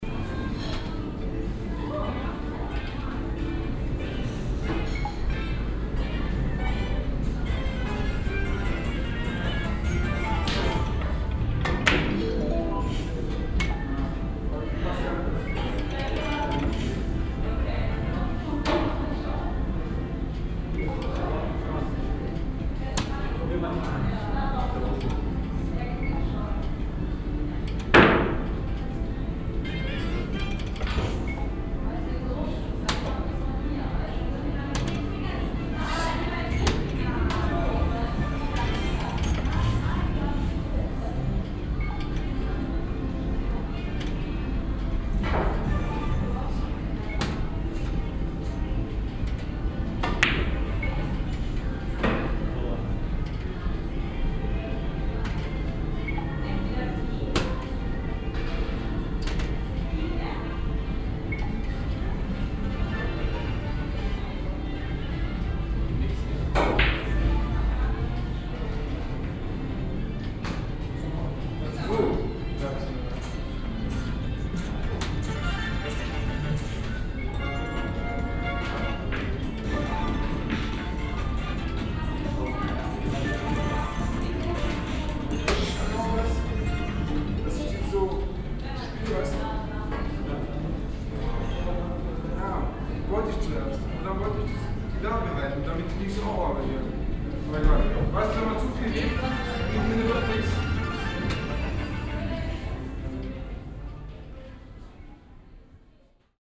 spielsalon am abend, spielautomaten und billardtische
soundmap:
social ambiences, topographic field recordings